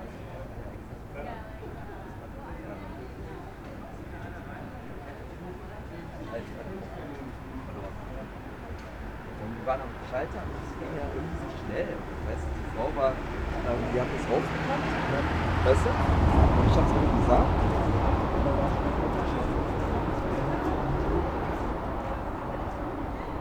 Berlin: Vermessungspunkt Friedelstraße / Maybachufer - Klangvermessung Kreuzkölln ::: 10.09.2011 ::: 01:59